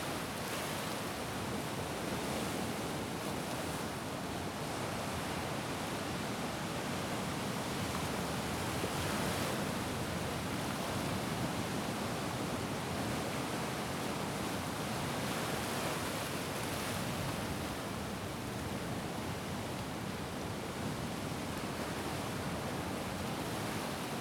Island - Atmospere of mystical beach 2